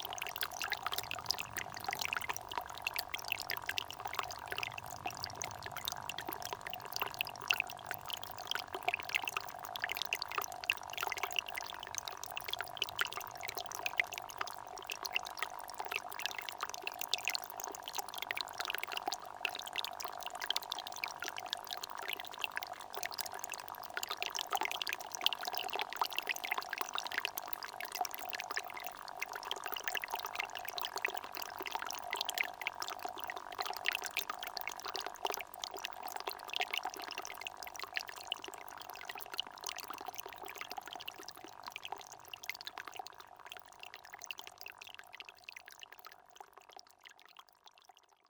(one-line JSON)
{"title": "Source-Seine, France - Seine spring", "date": "2017-07-29 15:45:00", "description": "The Seine river is 777,6 km long. This is here the sound of the countless streamlets which nourish the river. Here the water gushes from a so small hole that it makes sounds like fittings encountering serious problems !", "latitude": "47.50", "longitude": "4.71", "altitude": "429", "timezone": "Europe/Paris"}